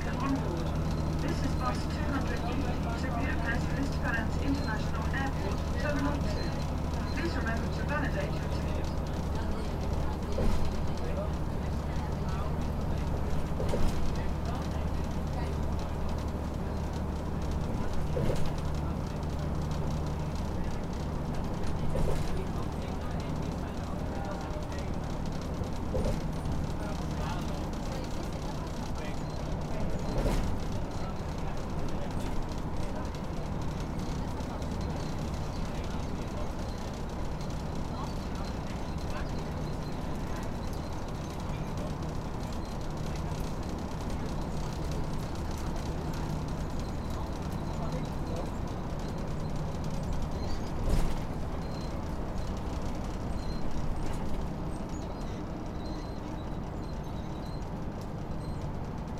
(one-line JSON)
{"title": "Bus 200E Budapest - Bus Ride", "date": "2016-12-04 15:10:00", "description": "The Bus 200E is driving from Kobanya Kispest, the terminal station of metro line 3, to the airport. The bus is making many noises. Recorded with a Tascam DR-100.", "latitude": "47.46", "longitude": "19.15", "altitude": "123", "timezone": "GMT+1"}